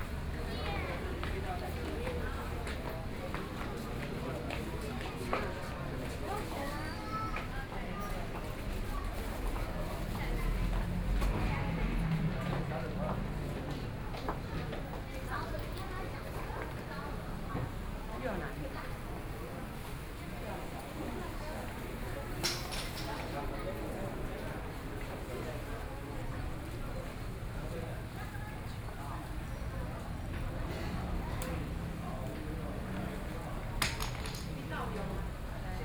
Buddhist Temple, Luzhou, New Taipei City - walking in the Temple
Buddhist Temple, Walking in the temple each floor, Binaural recordings, Sony PCM D50 + Soundman OKM II